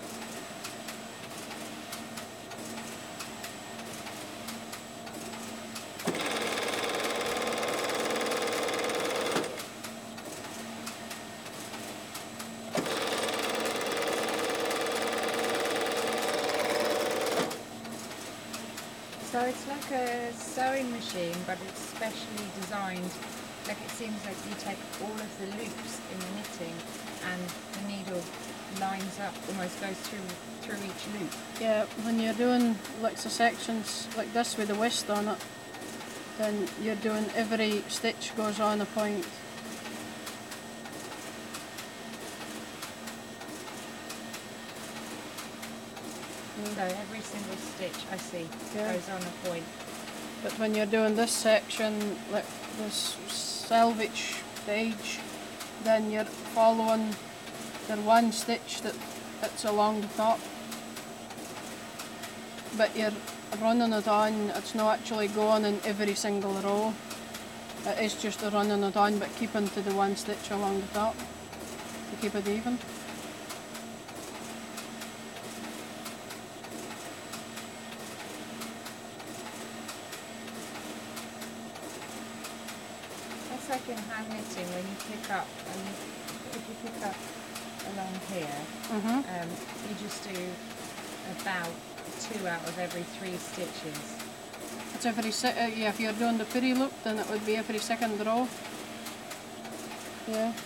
This is Sandra Johnson talking about linking machine-knitted pieces together after they have come out of the Shima machine. The linking machine has loads of tiny hooks, which each take one stitch from the knitting. The pieces are then sewn together through these stitches by the linking machine. Sandra is explaining how the cardigan she is working on will be joined together, and I am asking her about the whole process. In the background, the shima machine churns on. This is where Sandra works as a linker; she also has a croft in Yell and her own flock of Shetland sheep. I loved meeting Sandra, who has a hand in every part of the wool industry here on Shetland, from growing the wool at the start, to seaming up knitted garments at the end. Recorded with Audio Technica BP4029 and FOSTEX FR-2LE.
Shetland, Shetland Islands, UK